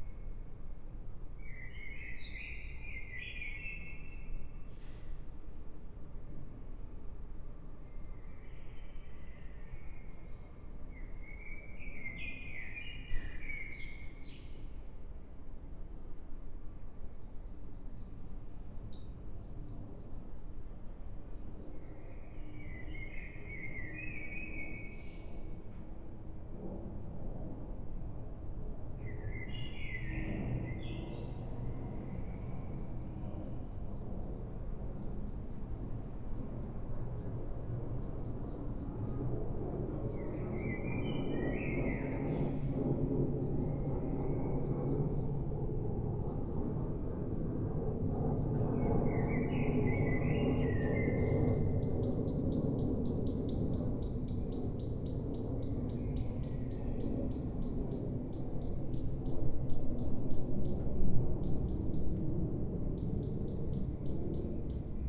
Berlin Bürknerstr., backyard window - blackbird, aircraft
quiet evening, it's cold, a blackbird is singing, an aircraft is passing by
(SD702, S502ORTF)
Berlin, Germany, 3 May 2017